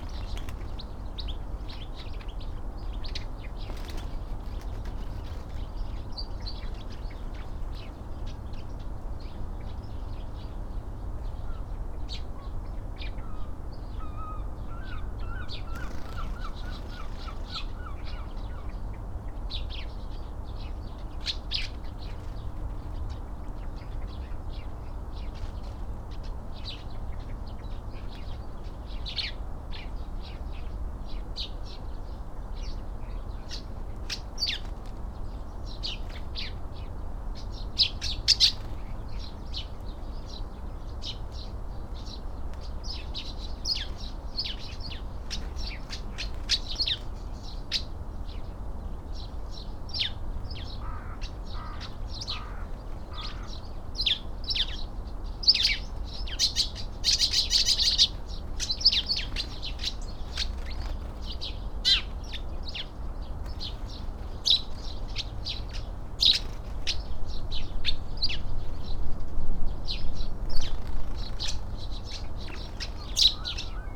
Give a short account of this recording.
tree sparrow soundscape ... SASS ... flock of birds in bushes near the reception area of RSPB Bempton Cliffs ... upto 40 birds at any one time ... bird calls from ... jackdaw ... blackbird ... crow ... herring gull ... goldfinch ... robin ... blue tit ... magpie ... pheasant ... dunnock ... pied wagtail ...